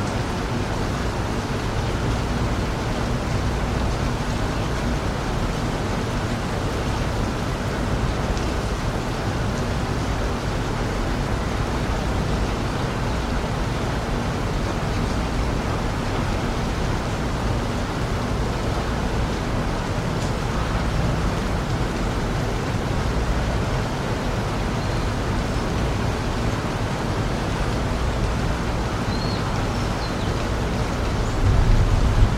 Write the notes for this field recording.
The rain was falling heavily in Bangkok on World Listening Day 2010. It slowed and then stopped. WLD